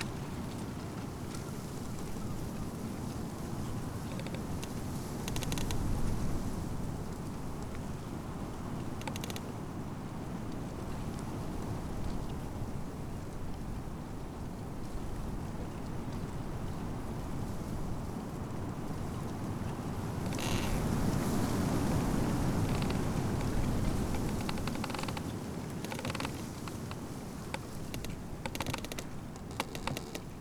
Utena, Lithuania, bush in wind - bush in wind
creaking bush in spring wind